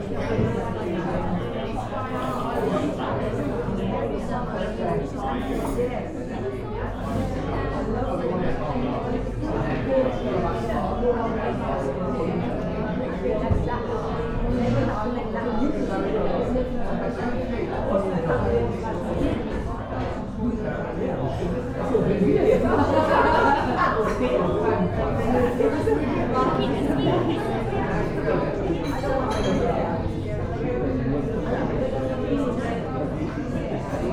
Happy customers in a busy cafe at lunchtime.
Worcestershire, England, United Kingdom, September 2022